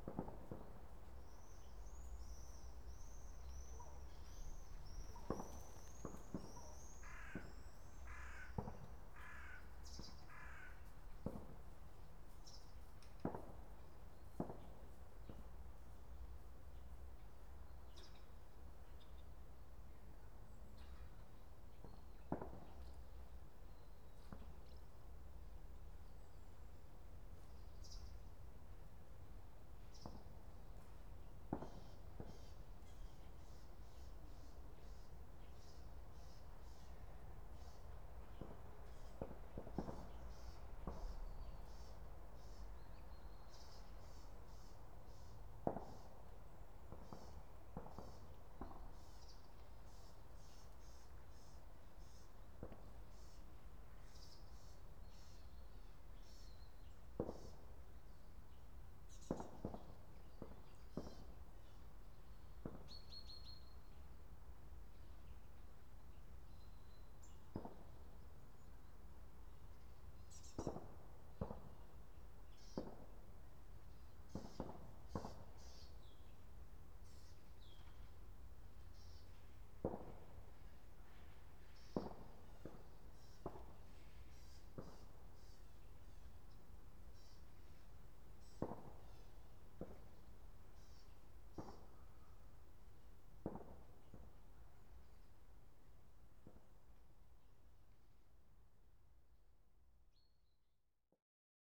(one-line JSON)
{"title": "Petrašiūnai, Lithuania, churchyard", "date": "2015-08-08 11:50:00", "description": "monastery churchyard. a little bit normalised file. very silent place in itself, but there was some shooting in the distance...", "latitude": "54.88", "longitude": "24.02", "altitude": "64", "timezone": "Europe/Vilnius"}